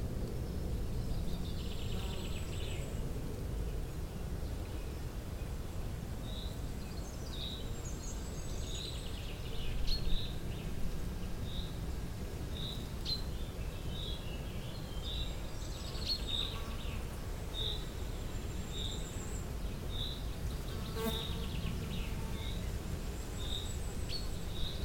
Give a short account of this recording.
On a beautiful sunny morning, the Hurtières forest big calm was immediately seductive. This explains why a recorder was left alone, hanged on a beech branch. This quiet recording includes dominant songs of the eurasian blackcap, the short-toed treecreeper and the yellowhammer (tsi-tsi-tsi-tsi-tsi-tih-tuh). More discreet are the common chiffchaff, the common chaffinch, the dunnock, the blackbird. Unfortunately, there's also planes, but this place was like that. Par un beau matin ensoleillé, le grand calme de la forêt des Hurtières s'est immédiatement annoncé séduisant. C'est de la sorte qu'un enregistreur a été laissé seul, accroché à la branche d'un hêtre. Cet apaisant témoignage sonore comporte les chants dominants de la fauvette à tête noire, le grimpereau des jardins et le bruant jaune (tsi-tsi-tsi-tsi-tsi-tih-tuh). De manière plus discrète, on peut entendre le pouillot véloce, le pinson, l'accenteur mouchet, le merle.